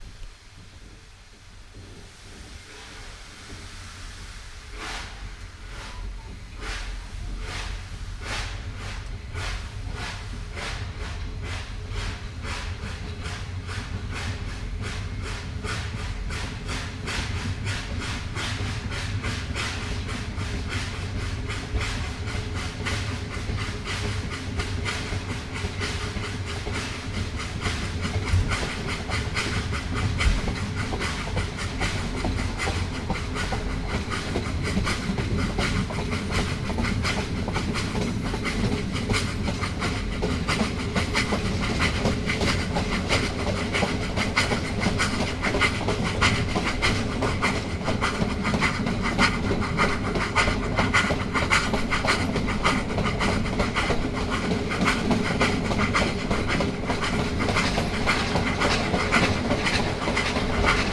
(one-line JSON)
{"title": "Grodzisk Wlkp, Kolejowa, Grodzisk Wielkopolski, Polska - Ol49-59 steam train.", "date": "2019-12-29 17:30:00", "description": "Ol49-59 steam train from Grodzisk Wielkopolski to Wolsztyn leaving the platform.", "latitude": "52.23", "longitude": "16.36", "altitude": "86", "timezone": "Europe/Warsaw"}